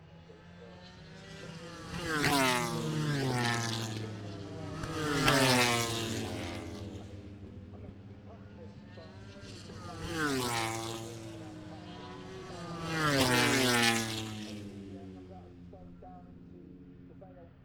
moto grand prix free practice one ... maggotts ... dpa 4060s to MixPre3 ...
Towcester, UK, 27 August 2021, 09:55